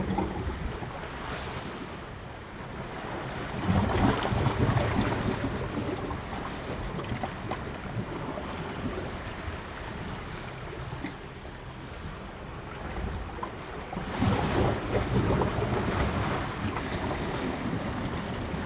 East Pier, Whitby, UK - Gurgling under the slip way ...
Gurgling under the slip way ... East Pier Whitby ... open lavalier mics clipped to sandwich box ... almost flat calm sea ... overcast ...